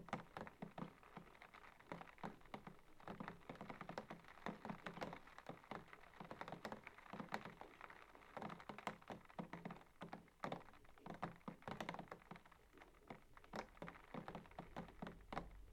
Recorded inside, this is the rain hitting the window. Recorded using DPA4060 microphones and a Tascam DR100.
Cornwall, UK, August 2015